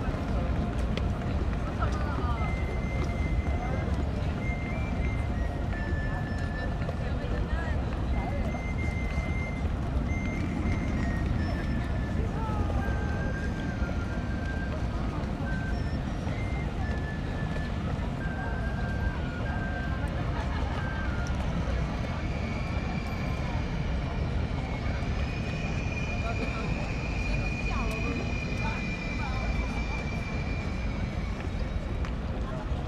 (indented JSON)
{
  "title": "outside staircase, Dom / Hbf Köln - Sunday afternoon ambience",
  "date": "2014-01-05 13:05:00",
  "description": "Sunday afternoon ambience, near Köln main station and Dom cathedral, on a big open staircase\n(PCM D50, Primo EM172)",
  "latitude": "50.94",
  "longitude": "6.96",
  "altitude": "54",
  "timezone": "Europe/Berlin"
}